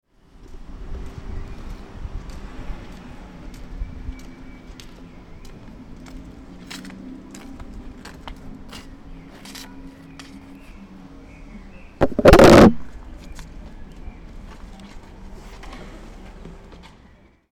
i was sitting with andreas and udo in front of radio aporee while a freak comes along the street, looks at the recording device and kicks against it
the city, the country & me: april 27, 2009
Berlin, Germany